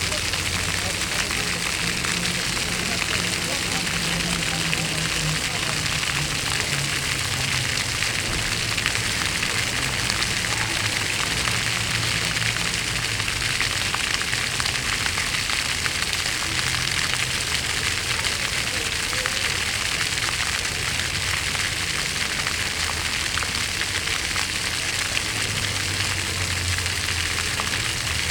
Fontaine Richard Lenoir (2)
Fontaine au sol boulevard Richard Lenoir - Paris
débit continu
Paris, France, 6 April 2011